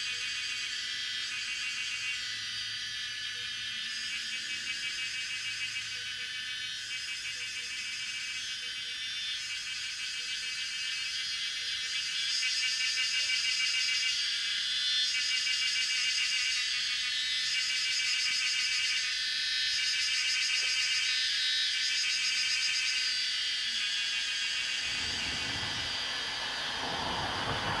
水上巷, TaoMi, Puli Township - Bird sounds and Cicadas cry
Cicada sounds, Bird sounds
Zoom H2n MS+XY